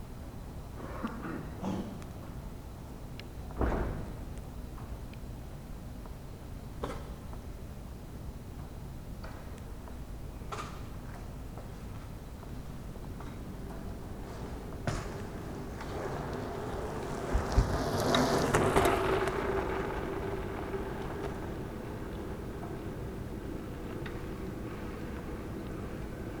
Berlin: Vermessungspunkt Friedel- / Pflügerstraße - Klangvermessung Kreuzkölln ::: 25.04.2012 ::: 01:59
25 April 2012, ~02:00